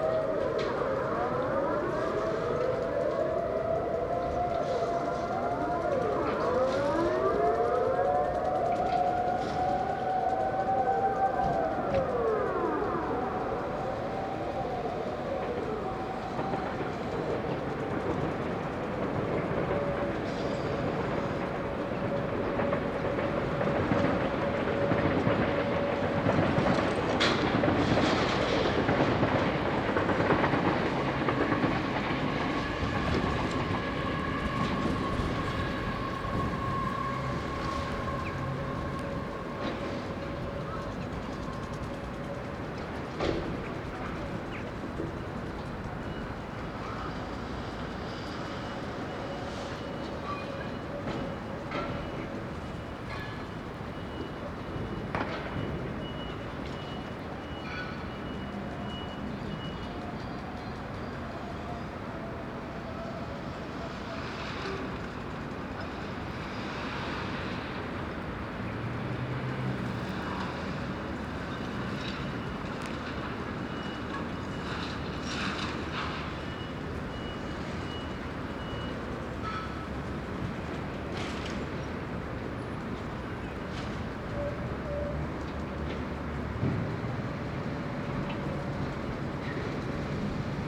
Binckhorst - Luchtalarm Test Dec 2011
Monthly Dutch sirens test in the industrial area of Binckhorst. Binaural recording.
Binckhorst Mapping Project
The Hague, The Netherlands